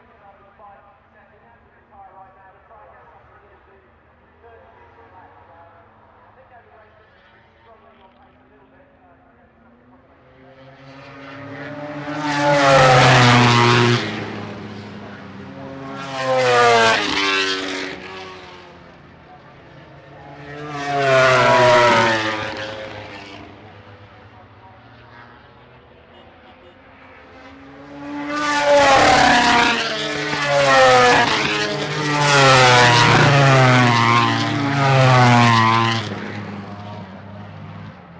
british motorcycle grand prix 2006 ... motogp qual ... one point stereo mic to minidisk ... some distant commentary ...
England, United Kingdom, 1 July 2006, 14:00